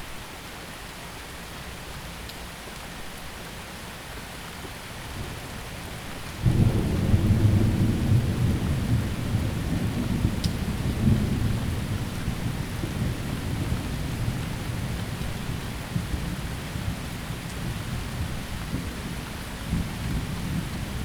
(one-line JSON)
{"title": "Colchester, Essex, UK - Thunderstorm over Colchester", "date": "2014-07-13 21:00:00", "latitude": "51.87", "longitude": "0.88", "altitude": "38", "timezone": "Europe/London"}